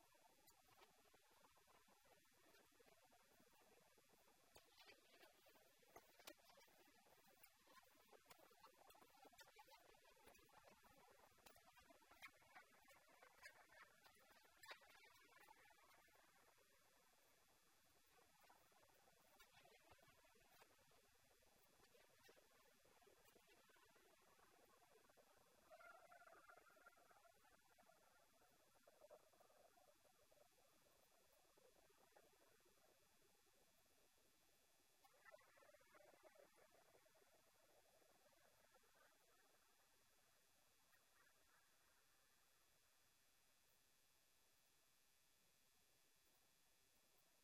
India, Karnataka, Bijapur, Gol Gumbaz, mausoleum, Dome, echoe
Karnataka, India